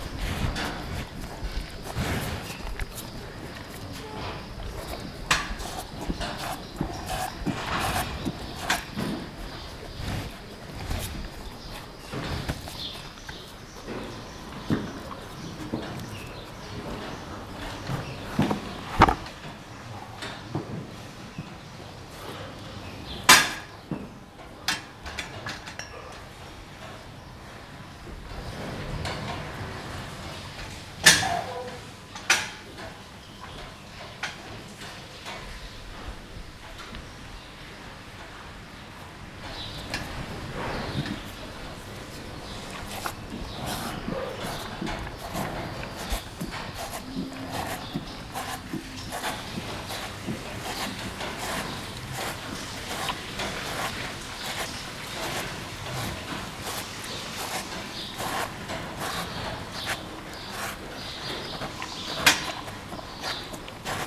Eine Kuh leckt Mineralsalz aus einem Eimer.
A cow licks mineral salt from a bucket.